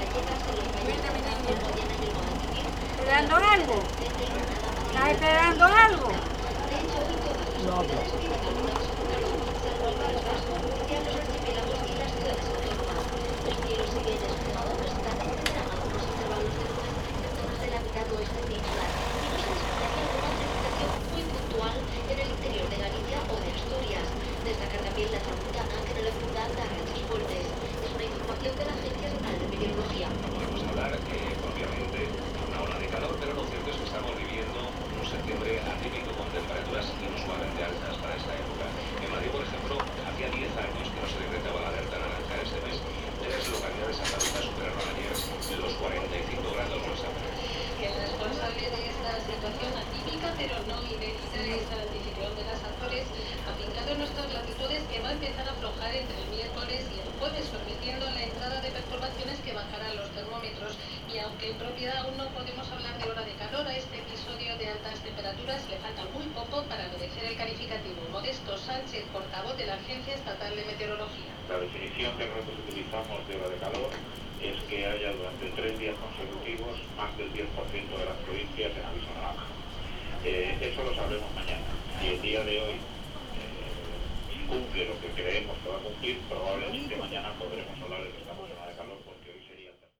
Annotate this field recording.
standing in a front of an old, cluttered convenience store with some basic goods. radio playing. Old lady coming out form the store surprised and uneasy seeing a man pointing a recorder in her direction. asking some questions. car departing. (sony d50)